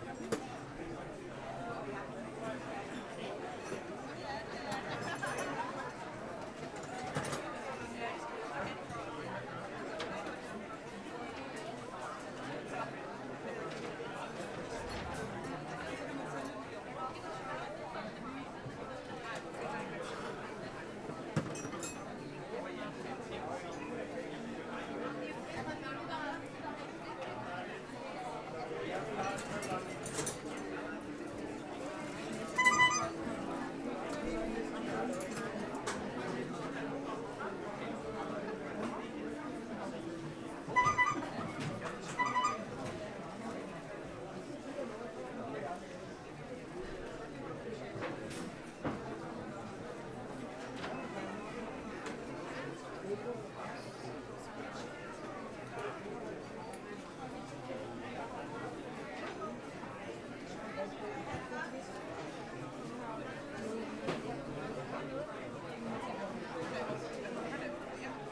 Indoor flea market at rush hour. Constant voice background noise. Close tapping sounds from people searching
Brocante en intérieur, très frequentée. Sons de voix continue. Bruit de personnes fouillant à proximité